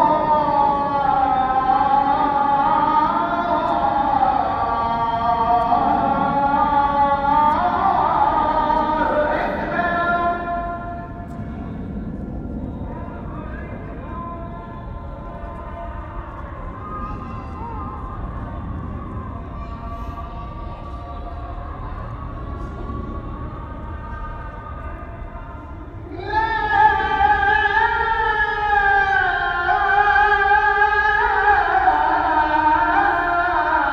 Istanbul - Istambul, mosqué bleue. Appel à la prière 10 mai 2007